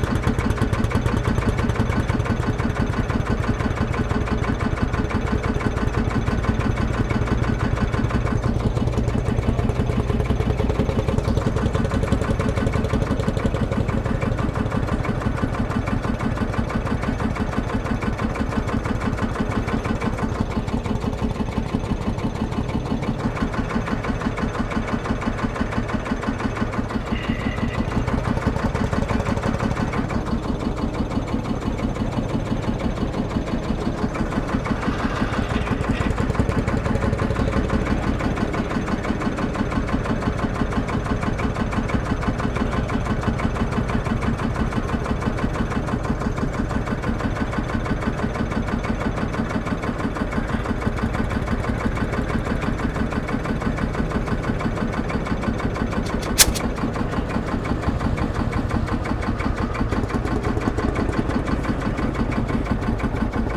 {
  "title": "Thwing, UK - Field Marshall Tractors",
  "date": "2016-06-25 13:30:00",
  "description": "Recorded at a Farm machinery and Tractor sale ... the tractors are warmed up prior to the auction ... one tractor fires up followed by another at 04:40 approx. ... lavalier mics clipped to baseball cap ... focus tends to waiver as my head moves ...",
  "latitude": "54.11",
  "longitude": "-0.42",
  "altitude": "107",
  "timezone": "Europe/London"
}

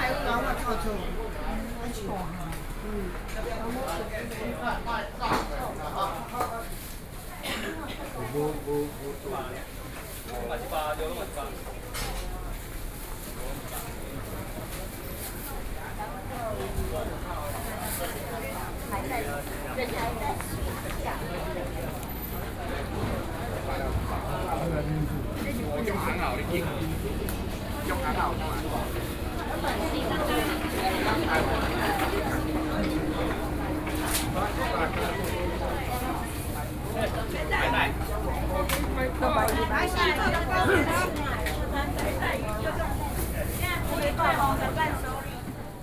{
  "title": "Ln., Kangding Rd., Wanhua Dist., Taipei City - Traditional markets",
  "date": "2012-11-03 08:59:00",
  "latitude": "25.04",
  "longitude": "121.50",
  "altitude": "16",
  "timezone": "Asia/Taipei"
}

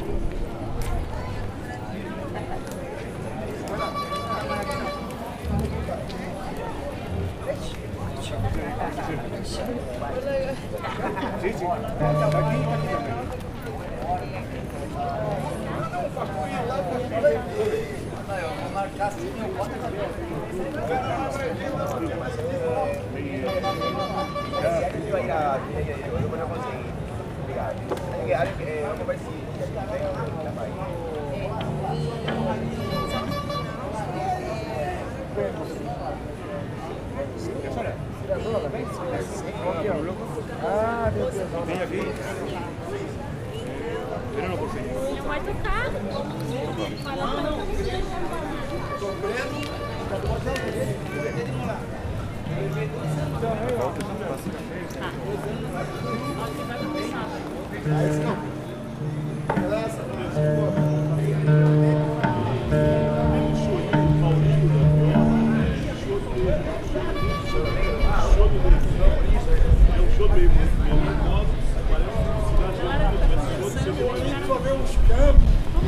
{
  "title": "Felipe Schimidt Street, Florianópolis",
  "description": "This is the most popular street in the downtown Florianopolis in a saturday morning. One can here people doing groceries and street musicians. At the end, it is possible to hear a street band reharsing for the upcoming carnival.",
  "latitude": "-27.60",
  "longitude": "-48.55",
  "altitude": "17",
  "timezone": "Europe/Berlin"
}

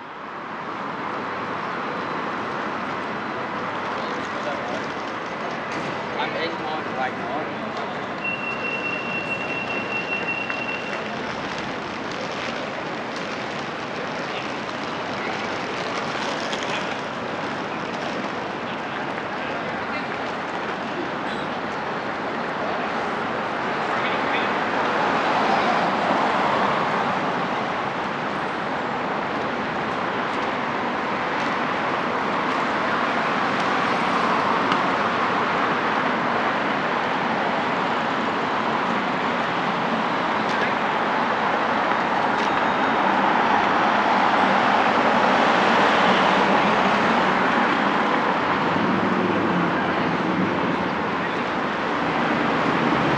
{
  "title": "Great Victoria St, Belfast, UK - Great Victoria Street-Exit Strategies Summer 2021",
  "date": "2021-08-28 15:22:00",
  "description": "Recording of people walking through with their luggage’s, either having left the bus station or heading towards it. There is a lot more traffic either from pedestrians or vehicles, the space is periodically emptied and filled with these instances of modes of travel.",
  "latitude": "54.60",
  "longitude": "-5.93",
  "altitude": "13",
  "timezone": "Europe/London"
}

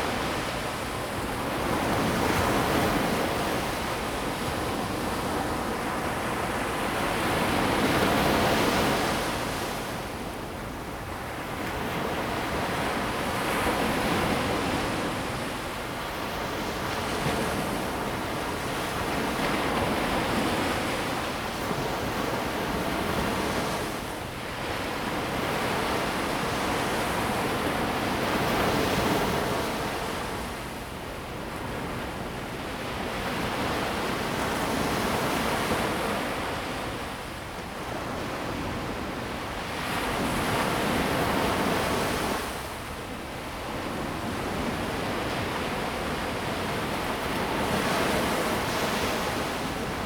{
  "title": "淡水, Tamsui District, New Taipei City - the waves",
  "date": "2017-01-05 15:35:00",
  "description": "On the beach, Sound of the waves\nZoom H2n MS+XY",
  "latitude": "25.19",
  "longitude": "121.41",
  "timezone": "GMT+1"
}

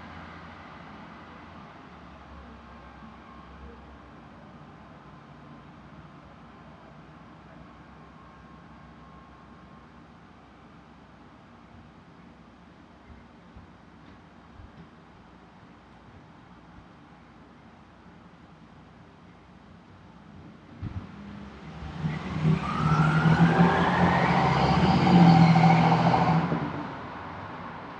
{
  "title": "Bedford-Stuyvesant, Brooklyn, NY, USA - Monday night Brooklyn street sounds",
  "date": "2013-08-12 22:19:00",
  "description": "The corner of Putnam Avenue and Classon Avenue at the border of the Bedford-Stuyvesant and Clinton Hill neighborhoods. 10pm on a Monday night in August. Street sounds, cars, stereos, bicycles, conversation, etc. Recorded on a MacBookPro",
  "latitude": "40.68",
  "longitude": "-73.96",
  "altitude": "20",
  "timezone": "America/New_York"
}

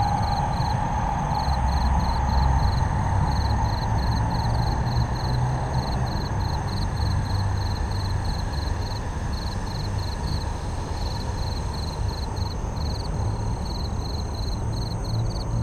{
  "title": "Zhuwei, Tamsui Dist., New Taipei City - In the bush",
  "date": "2012-04-19 19:14:00",
  "description": "In the bike lane, In the bush, MRT trains through, Insect sounds\nBinaural recordings, Sony PCM D50 + Soundman OKM II",
  "latitude": "25.13",
  "longitude": "121.46",
  "altitude": "4",
  "timezone": "Asia/Taipei"
}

